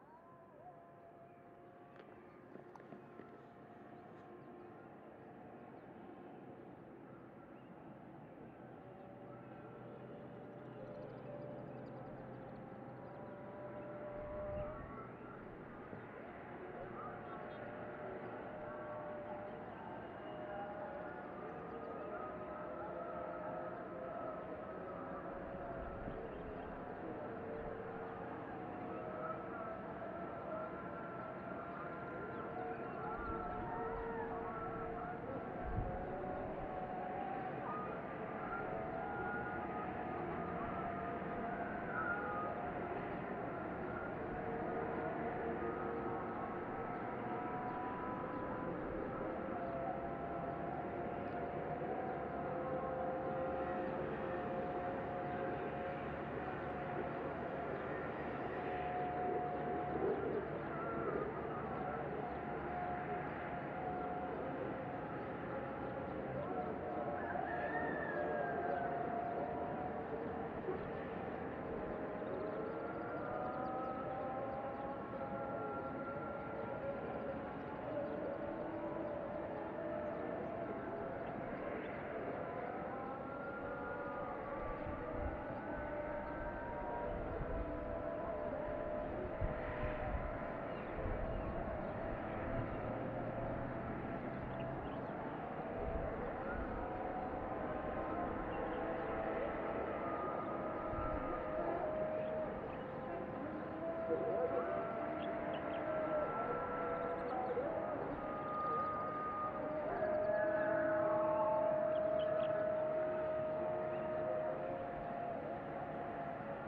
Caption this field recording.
From this spot, one can see across the old city of Fès, or Fès Bali, and hear all the sounds emanating from the city. This recording was made during the afternoon adhan, where the voices from many mosques mix with the natural sounds.